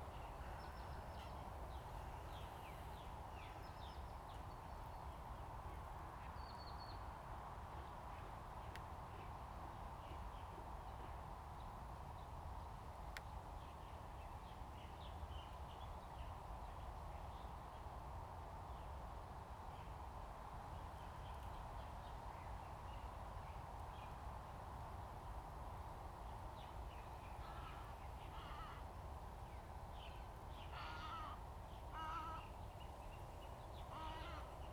陵水湖水鳥保護區, Lieyu Township - Waterfowl Sanctuary
Waterfowl Sanctuary, Birds singing, Forest, Wind
Zoom H2n MS +XY
金門縣 (Kinmen), 福建省, Mainland - Taiwan Border, 4 November 2014